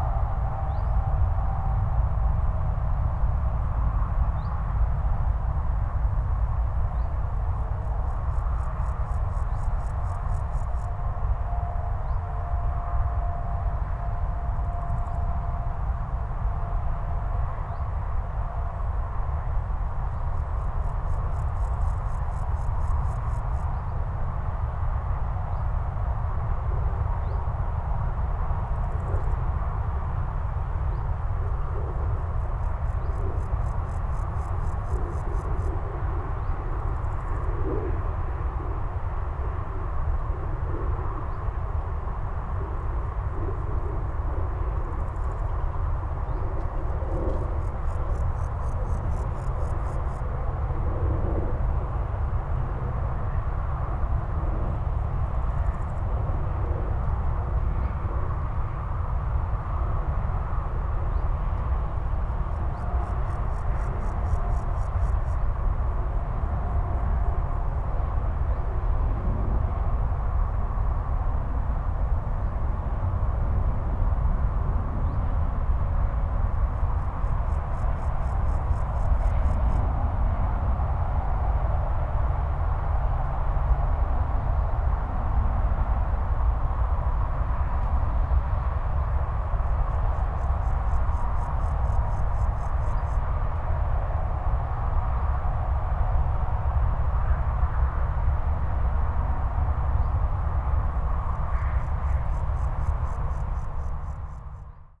Grüngürtel, Waldlabor, Stadtwald Köln, Deutschland - drone A4
dystopic drone of rushing cars, from the nearby Autobahn A4, heard in a so called forest laboratory, which rather intensifies the uncomfortable feelings of the recordist...
"The Cologne Forest Laboratory is a joint project of Toyota, RheinEnergie and the City of Cologne. Here new woods and forest images are to be researched, which bring us knowledge about how the forest of the future looks like and how this is to be managed. The research facility is experimenting in four thematic areas: the convertible forest, the energy forest, the climate forest and the wilderness forest."
(Sony PCM D50, Primo Em172)
Regierungsbezirk Köln, Nordrhein-Westfalen, Deutschland, 29 July 2019